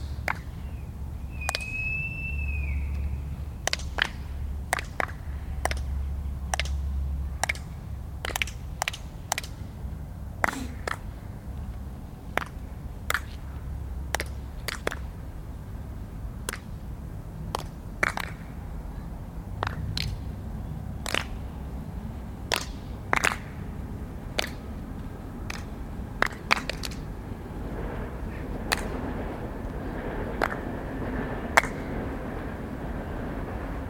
Stromovka Park clapping action
clapping action for
ew maps of time workshop in Prague. 3 recordings were synchronized and merged to form this piece.